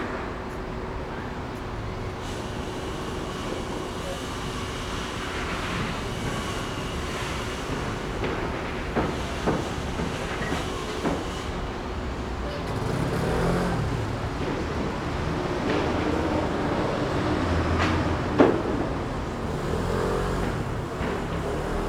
Ln., Sec., Zhongxiao E. Rd., Da’an Dist. - the construction site
Traffic Sound, Next to the construction site, Sound construction site
Zoom H4n + Rode NT4